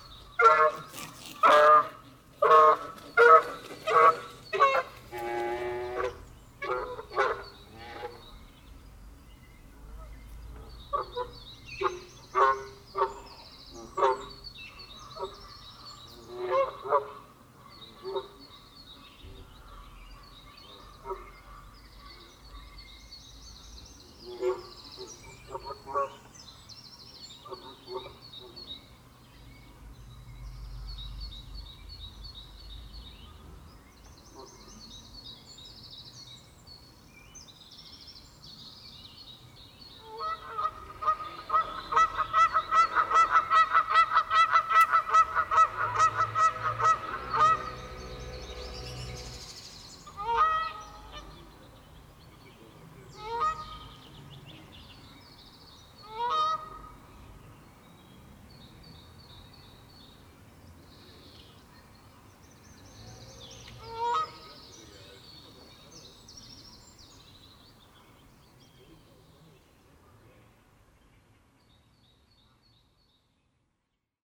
Namur, Belgique - Canadian geese
Canadian geese make a lot of noise near the Vas-t'y-frotte island, which is a very strange name. it means... huh, how to explain... in old french, something like : go there and rub you. That's not very clear. In fact, what is sure is that island represent a natural sanctuary, as it was a military domain until shortly. Birds especially go here in colony.